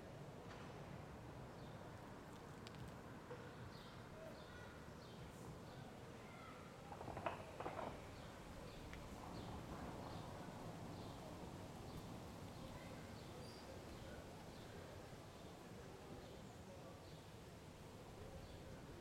Rue de l'Avenir, Molenbeek-Saint-Jean, Belgique - Après l'école
Avec mon Zoom H8
Brussel-Hoofdstad - Bruxelles-Capitale, Région de Bruxelles-Capitale - Brussels Hoofdstedelijk Gewest, België / Belgique / Belgien, 4 July, 12:45